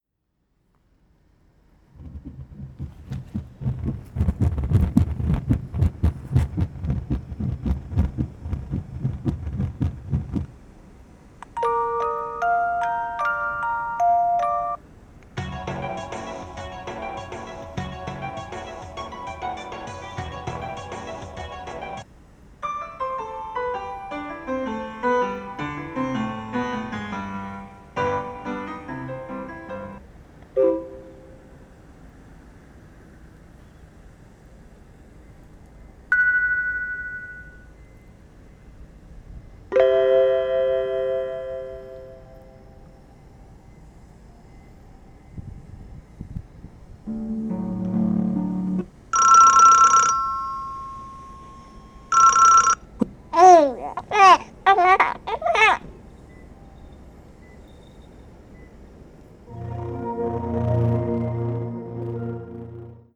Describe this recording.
at the parking lot there was a pole with a touch sensitive pad that made various sounds and melodies (roland r-07)